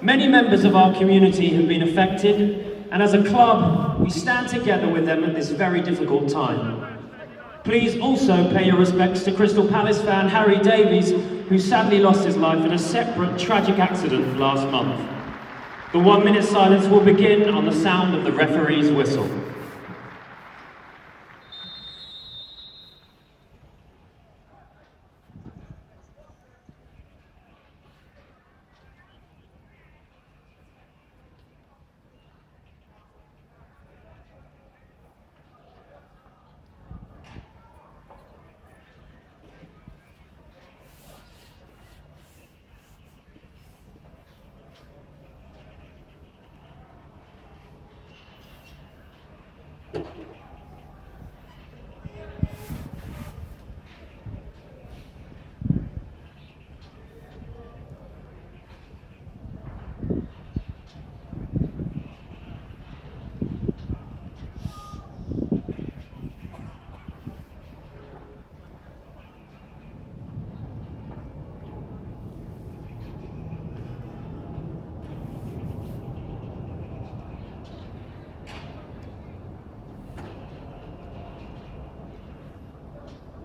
Selhurst Park - Crystal Palace FC - Crystal Palace Vs Man City crowd
Recorded at an English Premier League match between Crystal Palace and Man City. with 26,000 fans at Selhurst Park, the recording starts with a minutes silence in remembrance of local community members killed in a tram crash the week before. The recording then captures the atmosphere within Selhurst Park at various stages of the game. The ground is known in the EPL as being one of the most atmospheric, despite the limited capacity of only around 26,000. For the record Palace lost 2-1 with both Man City goals scored by Yaya Toure, in his first game back after being dropped by Pep Guardiola for comments from his agent several months beforehand.
London, UK